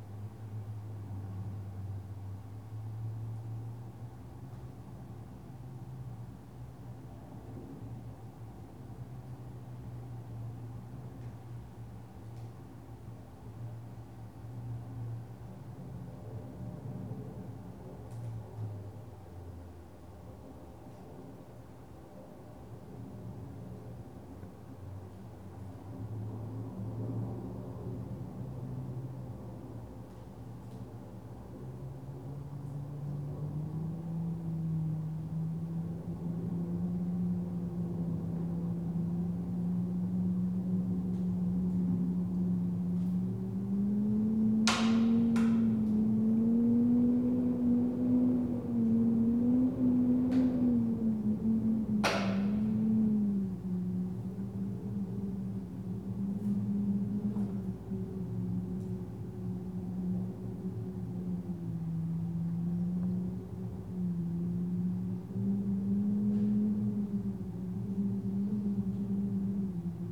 Poznan, Piatkowo district, ul. Mateckiege, hallway - air vent
recorded through an air vent. wailing wind, sparse sounds or the outside world. cracks come from expanding plastic bottles that were flattened a few minutes earlier.
6 September 2013, 12:02